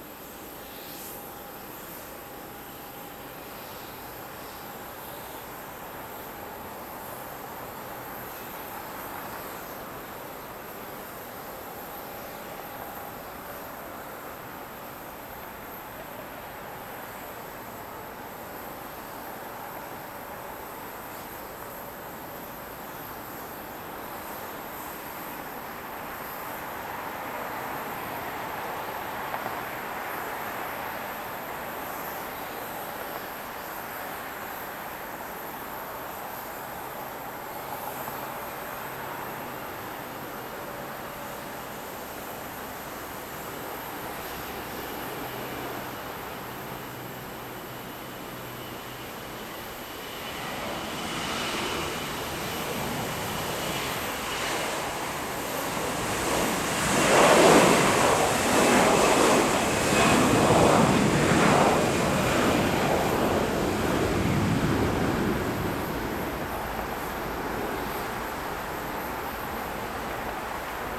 MSP Airport Terminal 1 Ramp - Minneapolis/St Paul International Airport Runway 30L Operations
Landings and takeoffs from Runway 30L at Minneapolis/St Paul International Airport recorded from the top of Terminal 1 Parking ramp. The sounds of the airport ramp and the passenger vehicle traffic exiting the terminal can also be heard.
Hennepin County, Minnesota, United States, 2 February 2022